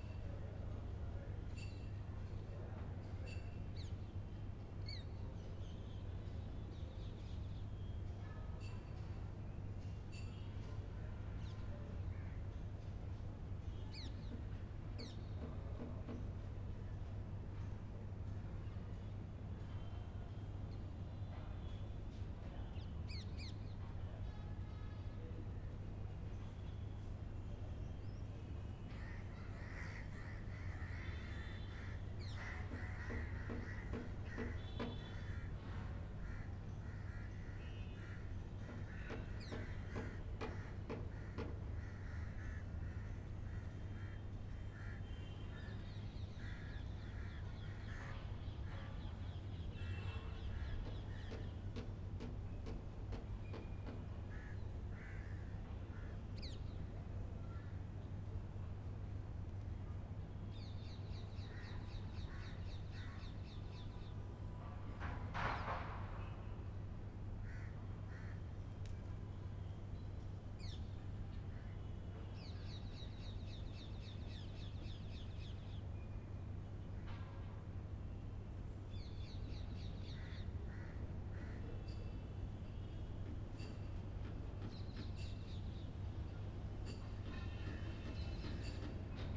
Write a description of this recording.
General city ambiance recorded from the flat roof of the very interesting old mosque in Delhi.